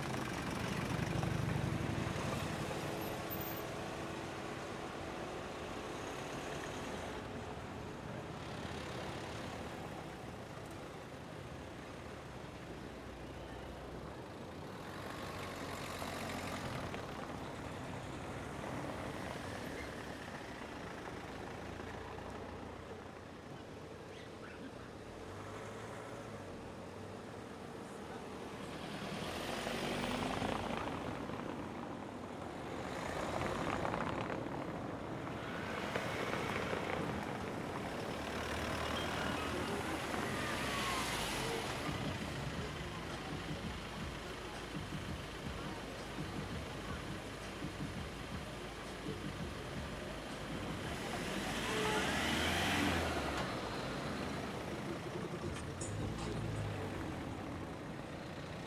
Saint-Gilles, Belgium - St Gilles
A lot of traffic passing over cobble-stone paving. I documented the nice sonic textures with Audio Technica BP4029 on wide stereo setting with FOSTEX FR-2LE. Nice.
20 June, ~16:00